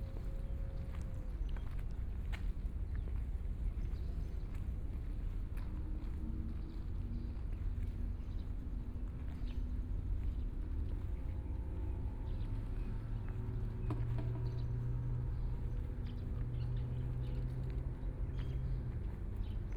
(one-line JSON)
{"title": "真愛碼頭, Kaohsiung City - walking In the dock", "date": "2014-05-14 05:51:00", "description": "In the dock, Birds singing", "latitude": "22.62", "longitude": "120.29", "timezone": "Asia/Taipei"}